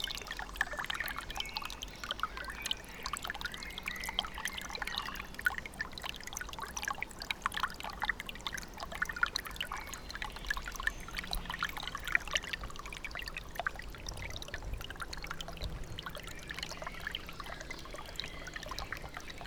gentle rustle of Bogdanka brook flowing over stones, pebbles, sticks and leaves.
Poznań, Poland, 27 June 2015